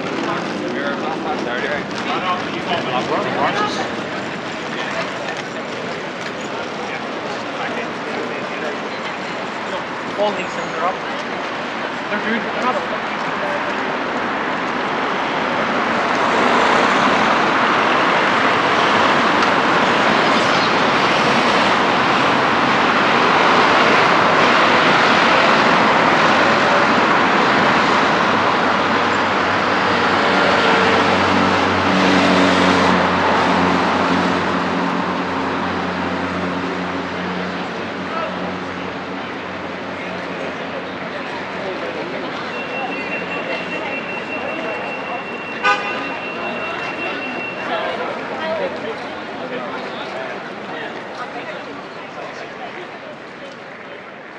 Great Victoria St, Belfast, UK - Great Victoria Street-Exit Strategies Summer 2021
Recording of people walking through with their luggage’s, either having left the bus station or heading towards it. There is a lot more traffic either from pedestrians or vehicles, the space is periodically emptied and filled with these instances of modes of travel.
Northern Ireland, United Kingdom, 28 August 2021, ~15:00